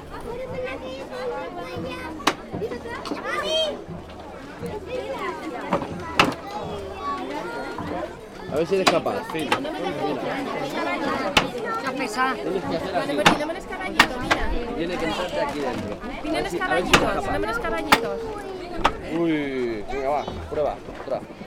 Calle Castell, Illes Balears, Spanien - Capdepera Soundwalk Medieval Festival
market stalls, visitors from many countries, musicians at the entrance of the castle, food stand with barbecue and drinks under palm trees, various stations with old wooden children's games, an old small children's carousel pushed by hand with a bell. // soundwalk über ein mittelalterliches Fest, Besucher aus vielen Ländern, Marktstände, Musiker im Eingang der Burg, Essenstand mit Gegrilltem und Getränken unter Palmen, verschiedene Stationen mit alten Kinderspielen aus Holz, ein altes kleines Kinderkarusell von Hand angeschoben mit einer Glocke.